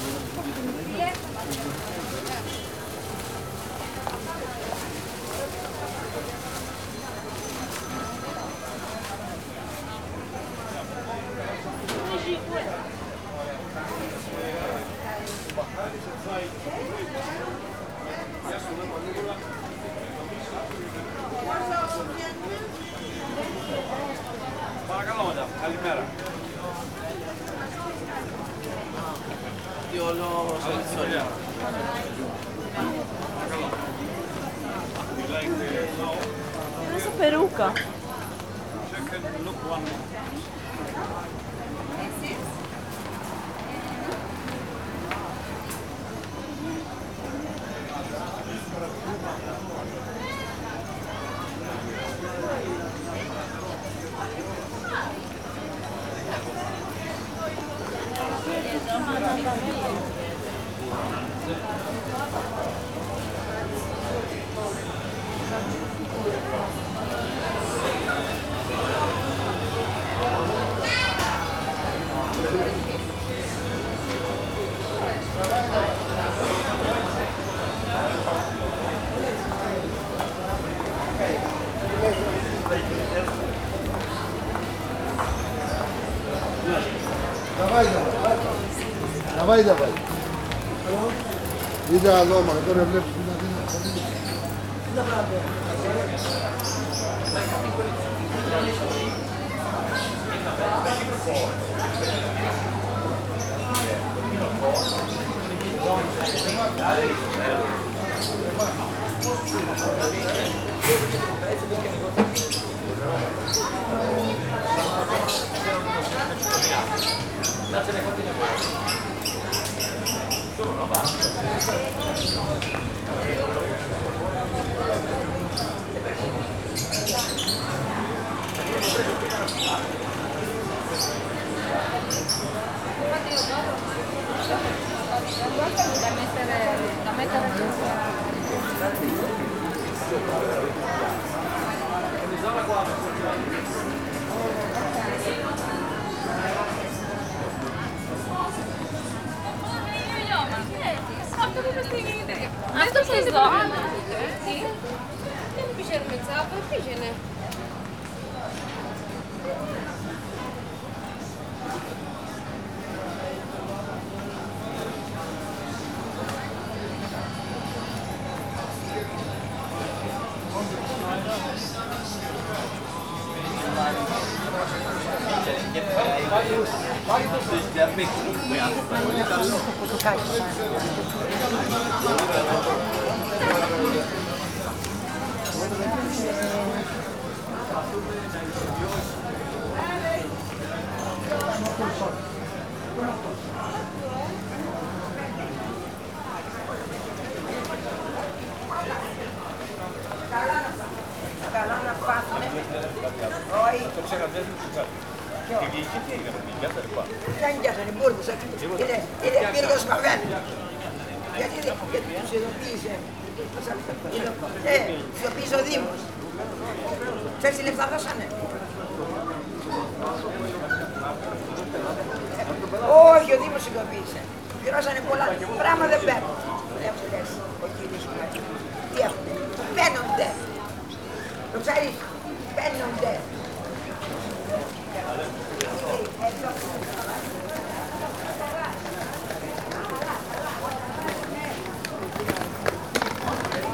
a walk on the street that is a filled with small shops, stands, cafes, restaurants, workshops. busy with tourists and locals.